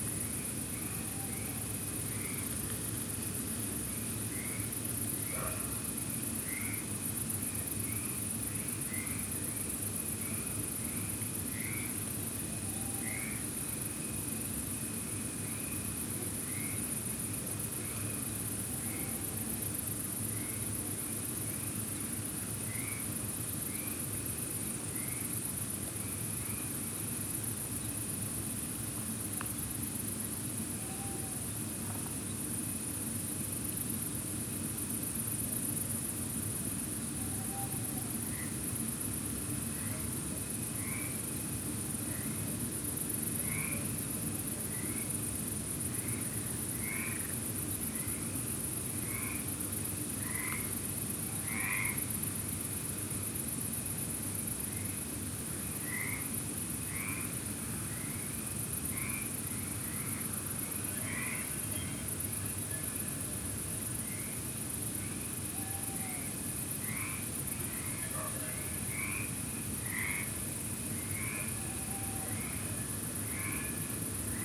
SBG, Carrer de Lievant - Noche
Ambiente nocturno en el campo detrás de la fábrica. Ráfagas de viento agitan las ramas, un coro de ranas acompaña a las cigarras y grillos. Sonidos distantes del tráfico, ladridos, ganado y algún ave nocturna.
St Bartomeu del Grau, Spain, 29 July 2011